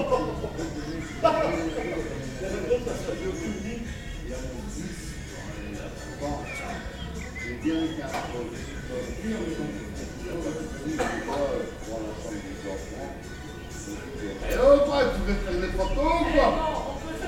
Mont-Saint-Guibert, Belgique - Drunk people
Drunk people at the local bar. How this could be painful for neighbours everyday...